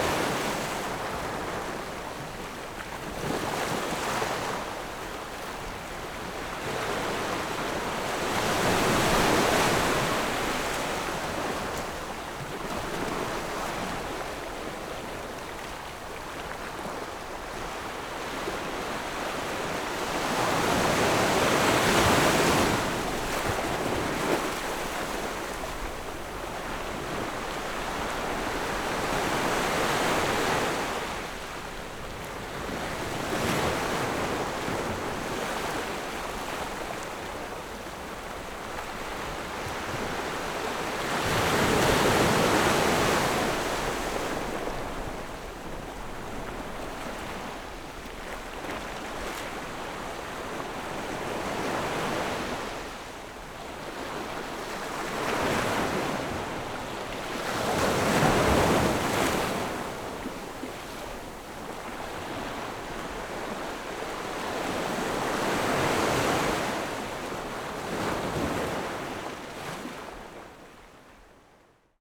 芹壁村, Beigan Township - At the beach
Sound of the waves, At the beach
Zoom H6 +Rode NT4